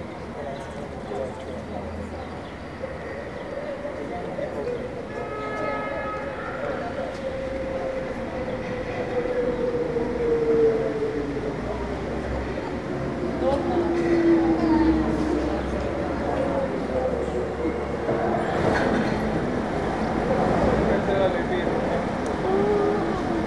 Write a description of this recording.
at a city bus station, here fairly quiet with some footsteps and conversation in the background, international city scapes and social ambiences